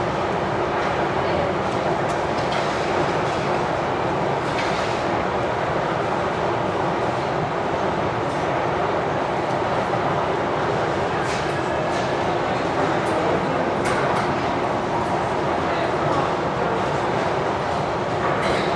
Ленинский пр-т., Москва, Россия - Leninsky Prospekt metro station
At the exit (inside) of the lobby of the Leninsky Prospekt metro station. You can hear the esclator working, the turnstiles opening, the train coming, people talking to each other, the loudspeaker asks everyone to be careful.